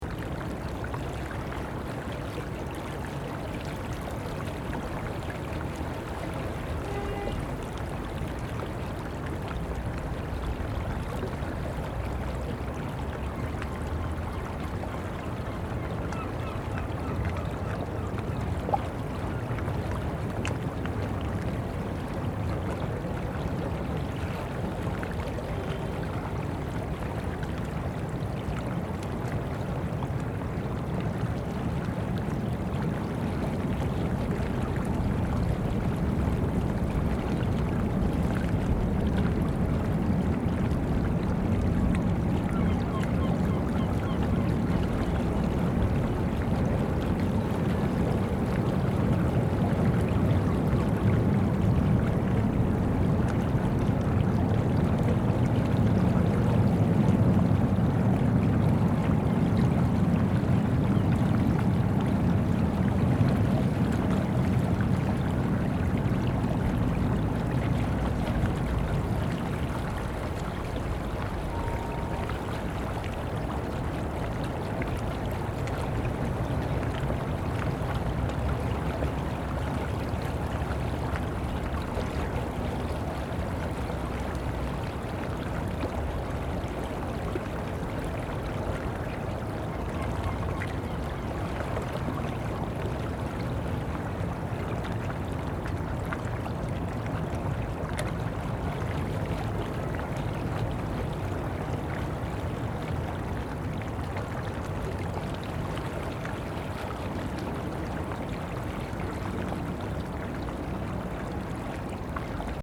Ponte Luís I, Portugal Mapa Sonoro do Rio Douro Luis I bridge, Portugal Douro River Sound Map